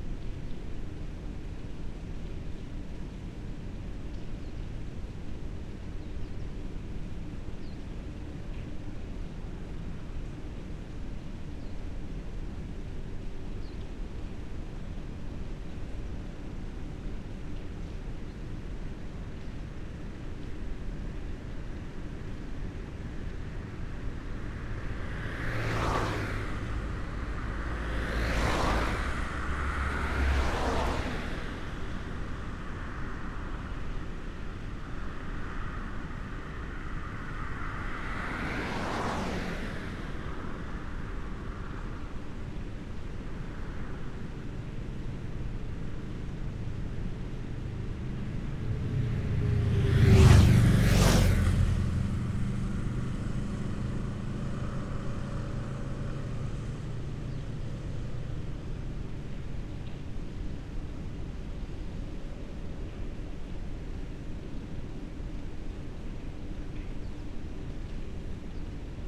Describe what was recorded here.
Beside main road from Nova Gorica to Plave, near Solkan dam. Recorded with Lom Uši Pro, Olson Wing array.